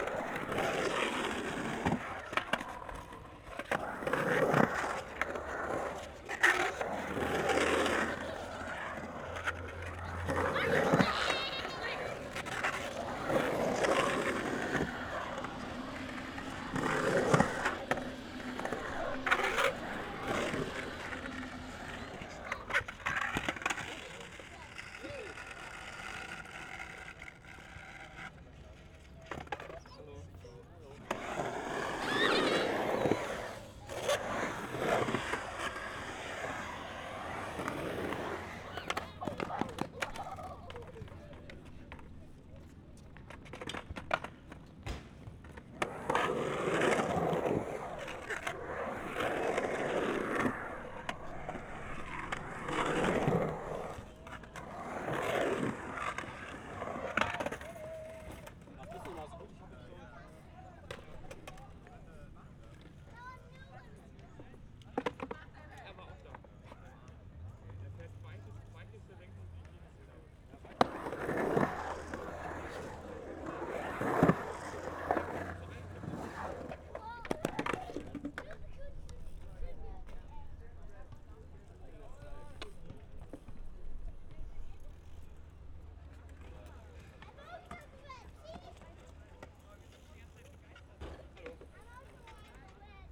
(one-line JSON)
{"title": "Berlin, Gleisdreieck", "date": "2011-11-12 13:15:00", "description": "skaters excercising. the huge and fascinating area at Gleisdreieck is slowly developed into a park.", "latitude": "52.50", "longitude": "13.37", "altitude": "39", "timezone": "Europe/Berlin"}